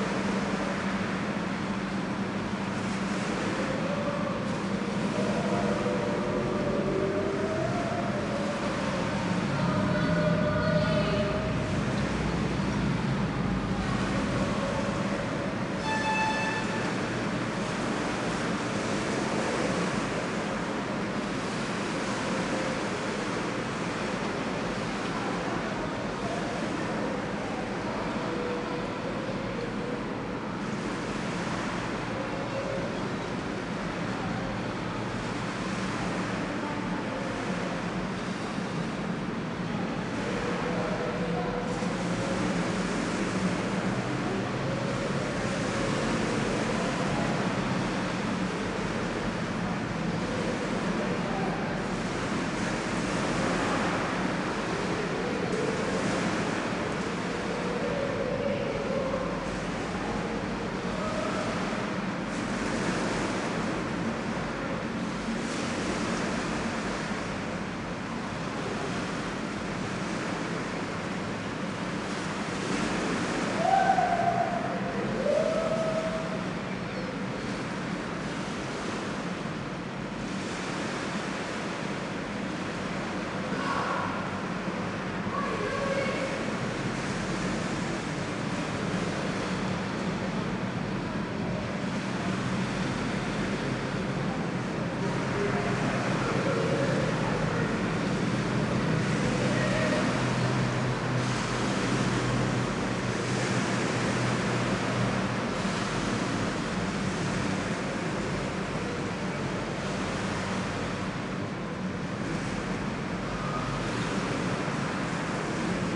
Southbank, London, UK - Under Blackfriars Bridge
Recorded with a pair of DPA4060s and a Marantz PMD661.
30 August 2016, 18:30